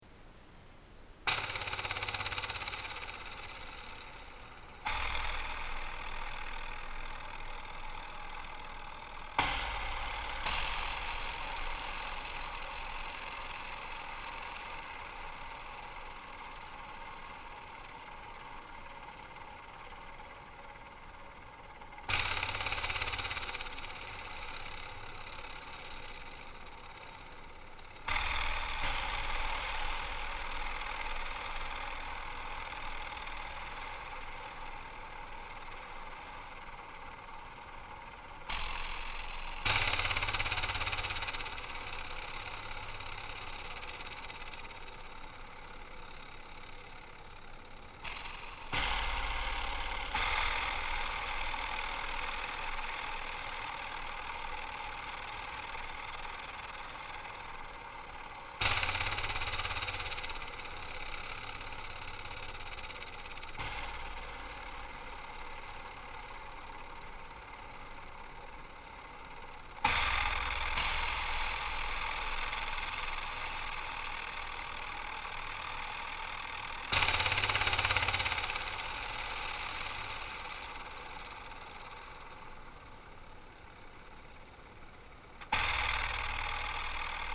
contact micd barbed wire fence - made during KODAMA residency - september 2009
france